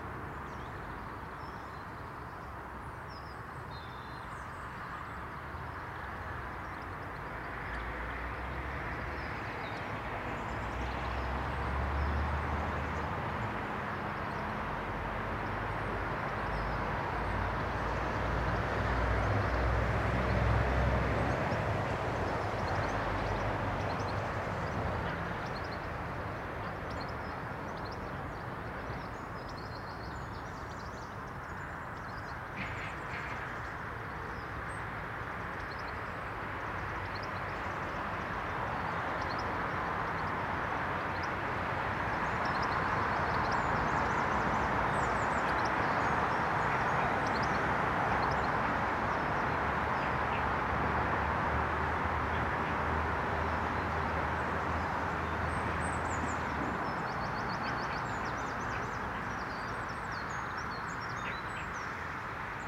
Contención Island Day 58 inner southeast - Walking to the sounds of Contención Island Day 58 Wednesday March 3rd
The Drive High Street Little Moor Highbury
A secret pond
an island bench
tucked behind a hazel coppice
Bee hives
quiet in the chill morning
Against the traffic
the birds keep in contact
but little song
3 March, ~10:00